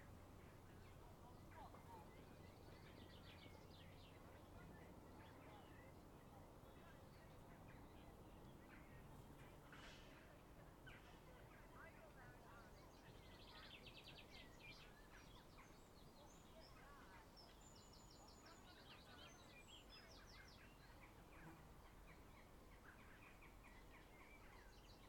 Grantchester Meadows, Cambridge, UK - Grantchester Meadows Late June Evening
Grantchester Meadows on a June evening. Zoom F1 and Zoom XYH-6 Stereo capsule attached to a tree in the meadows along the river footpath. Light wind gently rustling the leaves of the trees, birdsong and passers-by. Quieter than usual (even with the lockdown) given a colder turn in the weather.